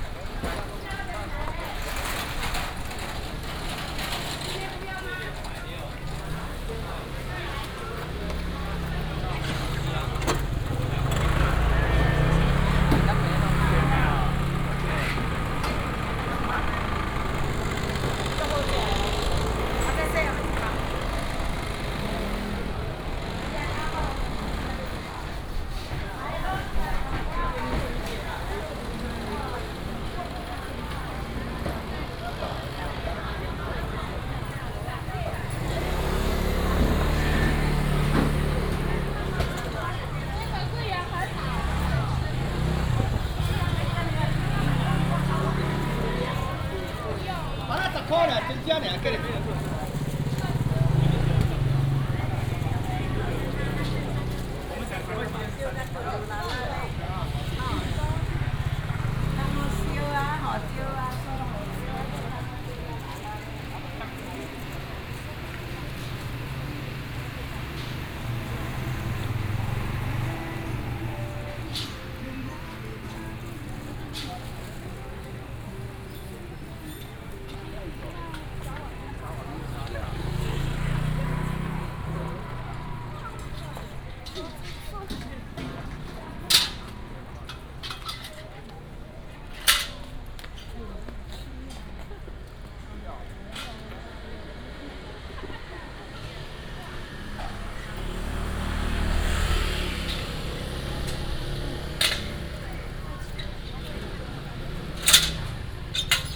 華美黃昏市場, Taichung City - Evening market
walking in the Evening market, Traffic sound